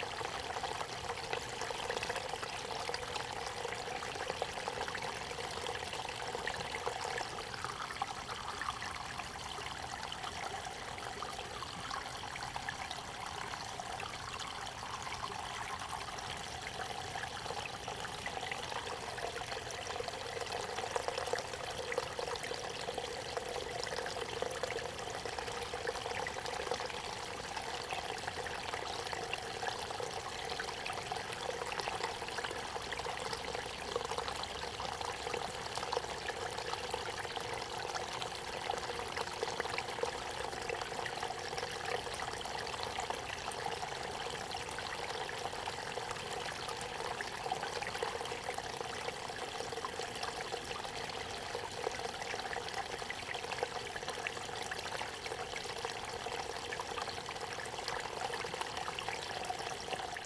Greentree Park Cascade, St. Louis, Missouri, USA - Greentree Cascade
Small cascade near bridge. Distant hum of power lines and electric substation.